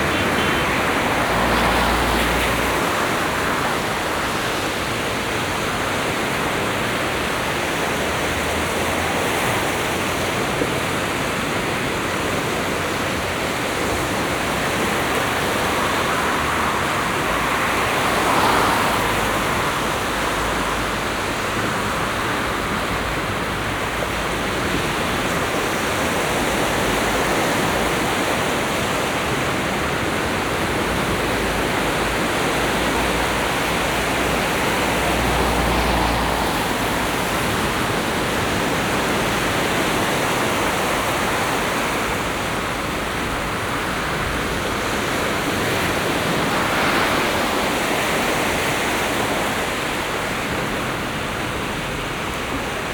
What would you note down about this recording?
Binaural field recording part of a set which seeks to revel seasonal morphology of multiple locations within Scarborough.